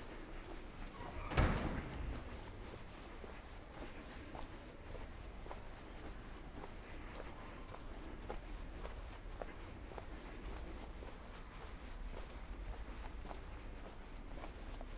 Ascolto il tuo cuore, città. I listen to your heart, city. Several chapters **SCROLL DOWN FOR ALL RECORDINGS** - Marché en plein air le samedi après-midi aux temps du COVID19 Soundwalk
"Marché en plein air le samedi après-midi aux temps du COVID19" Soundwalk
Saturday March 28th 2020. Walking San Salvario district and crossing the open-air market of Piazza Madama Cristina
Eighteen days after emergency disposition due to the epidemic of COVID19.
Start at 3:03 p.m. end at 3:35 p.m. duration of recording 31'34''
The entire path is associated with a synchronized GPS track recorded in the (kmz, kml, gpx) files downloadable here: